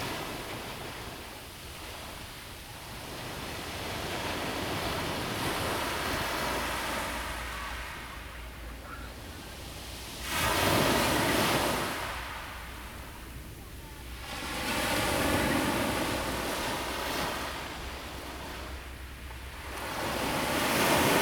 Qixingtan Beach, Hualien County - sound of the waves
sound of the waves
Zoom H2n MS+XY +Sptial Audio